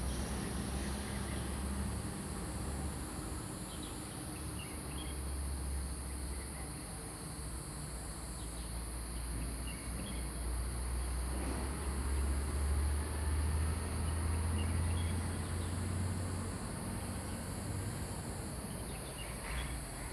埔里鎮桃米里, Taiwan - In the parking lot
Birds singing, Chicken sounds, Dogs barking
Zoom H2n MS+XY